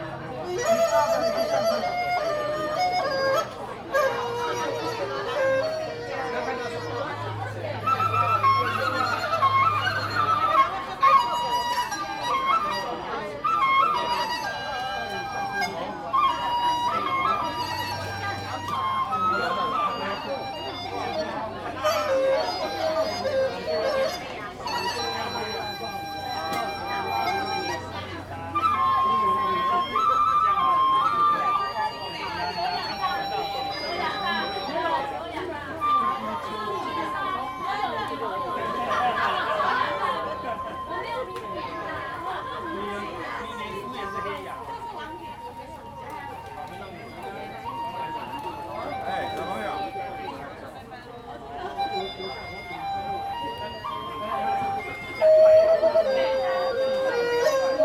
BiHu Park, Taipei City - Poets recite
The Taiwan famous poet reciting, Opening Event
Zoom H2n MS+XY
14 September 2014, ~15:00